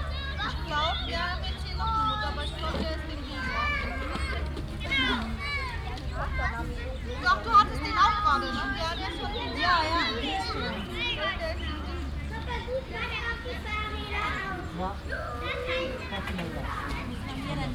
Auf einem Spielplatz im Schloßpark Borbeck. Die Klänge von Stimmen spielender Kinder, Mütter unterhalten sich. Ein tiefsonoriges Flugzeug kreuzt den Himmel.
At a playground in the park of Schloß Borbeck.The sound of the voices of playing childrens and talking mothers. A plane is crossing the sky
Projekt - Stadtklang//: Hörorte - topographic field recordings and social ambiences